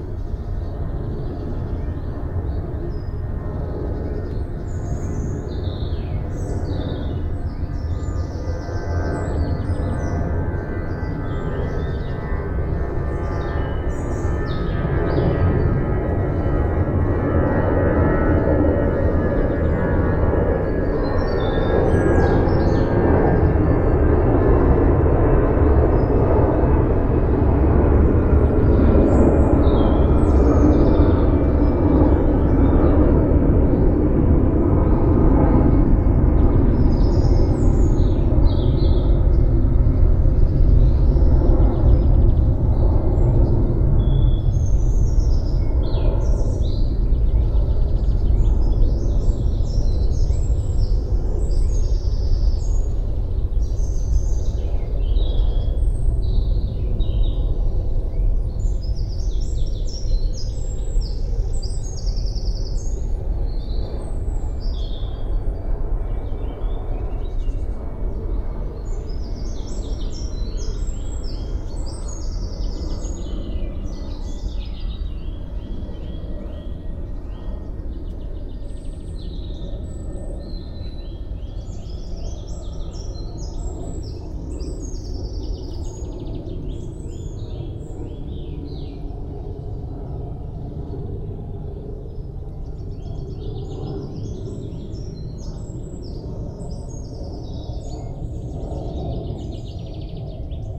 {
  "title": "Goussainville, France - Dead city",
  "date": "2018-05-01 08:28:00",
  "description": "Simply think this could be our home. It would be only the right words I could use.\nGoussainville Vieux-Pays is the name of this village. It's nearly a dead city.\nDuring the year 1973, ADP (meaning Paris Airports) built the Roissy airport. Goussainville Vieux-Pays is exactly below the called '27L' take-off runway of the airport. The area is classified as an \"intense noise\" landscape. All the year 1973, ADP made proposals to buy the houses, double price compared to the normal price. Initially populated 1000 inhabitants, a large part of the village moved. On the same time, the 3 June 1973, the Tupolev plane Tu-144S CCCP-77102 crashed just near the old village, on the occasion of Bourget show, destroying a school. It made a large trauma.\nDuring the 1974 year, 700 inhabitants leaved. All houses were walled with blocks. But 300 inhabitants absolutely refused to leave. Actually, Goussainville Vieux-Pays is a strange landscape. Nothing moved during 44 years.",
  "latitude": "49.01",
  "longitude": "2.46",
  "altitude": "82",
  "timezone": "Europe/Paris"
}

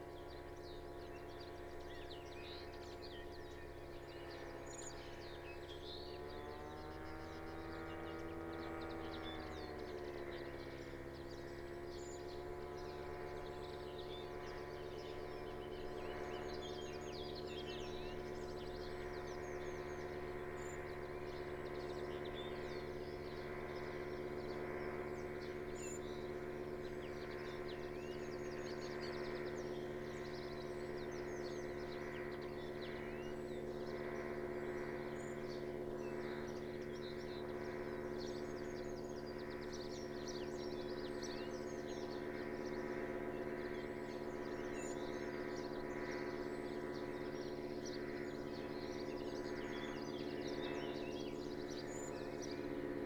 {
  "title": "Malton, UK - autogyro ...",
  "date": "2021-06-06 05:55:00",
  "description": "autogyro ... dpa 4060s in parabolic to mixpre3 ... bird calls ... song ... from ... wren ... chaffinch ... blackbird ... tree sparrow ... song thrush ... linnet ... blackcap ...",
  "latitude": "54.12",
  "longitude": "-0.54",
  "altitude": "79",
  "timezone": "Europe/London"
}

